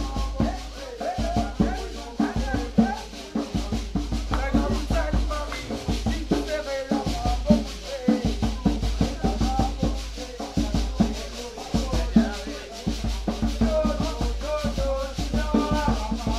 maloya au marche couvert de saint pierre
joueurs de maloya sur le marche de saint pierre de la reunion
St Pierre, Reunion, August 2010